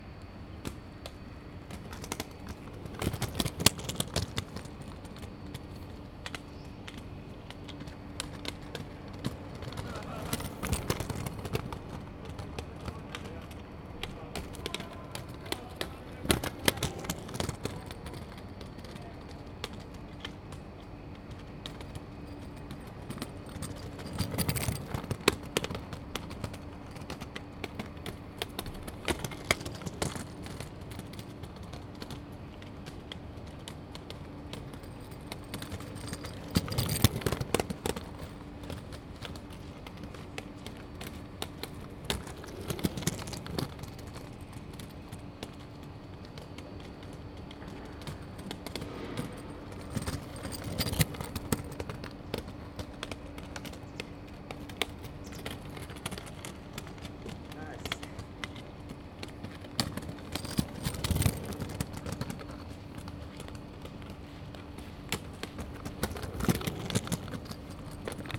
This is the recording of the sound of the Highline on our NYUAD campus.